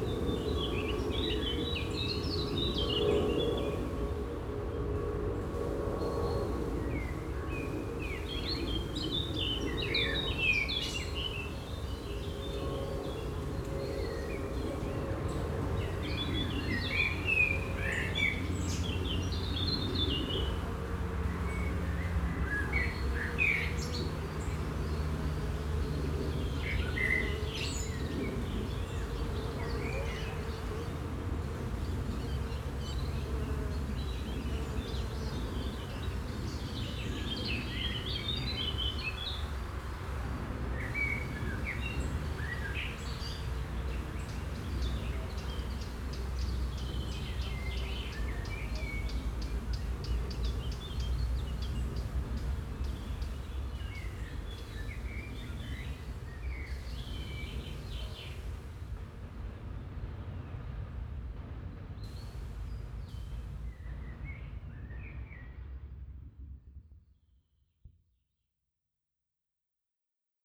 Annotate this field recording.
An einem Rapsfeld an einem milden Frühlingsspätnachmittag. Der Klang von Bienen in den Rapsblüten, das Zwitschern von Vögeln und ein Flugzeugüberflug. At a canola field on a mild late spring afternoon. The sound of bees inside the canola blossoms, the tweet of birds and a plane crossing the sky. Projekt - Stadtklang//: Hörorte - topographic field recordings and social ambiences